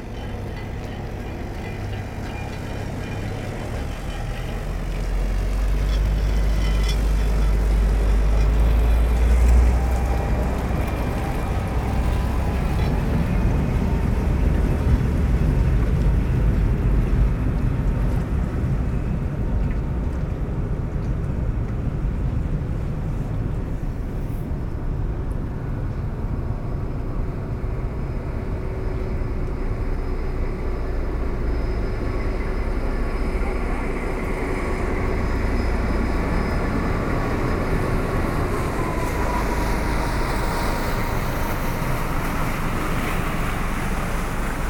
A boat going out the Varennes-Sur-Seine sluice. In first, the doors opening ; after the boat is passing by on the Seine river. The boat is called Odysseus. Shipmasters are Françoise and Martial.
La Grande-Paroisse, France